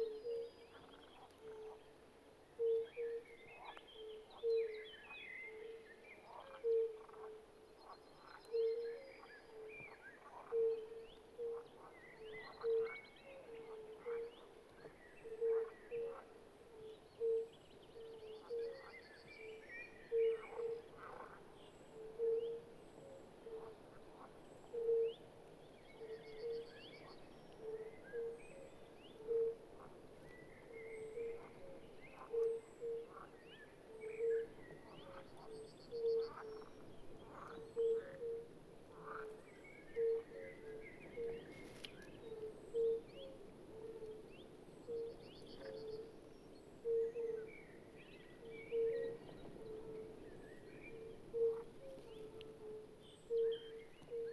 Sirutėnai, Lithuania, fire-bellied toads
Fire-bellied toads (Bombina Bombina) singing.